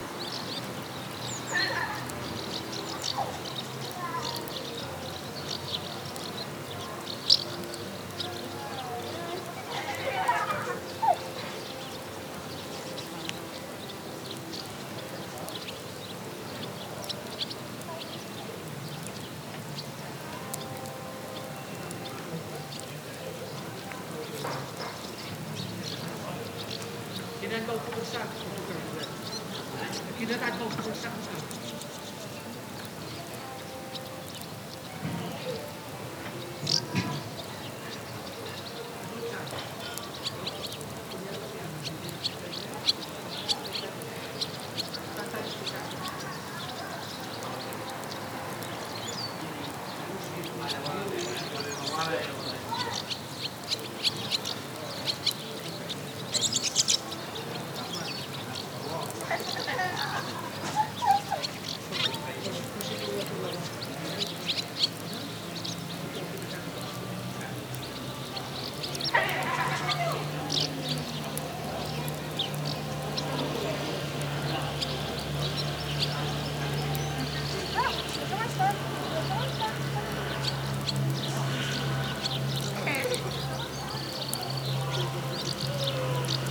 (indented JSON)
{
  "title": "SBG, Vilanova - Mañana",
  "date": "2011-08-01 11:00:00",
  "description": "Lunes por la mañana en el campo de Vilanova. Muchos pájaros, niños jugando, una radio y de fondo, los minúsculos crujidos de la paja secándose bajo un sol de justicia, tras unos días de lluvias y tiempo húmedo.",
  "latitude": "41.98",
  "longitude": "2.17",
  "timezone": "Europe/Madrid"
}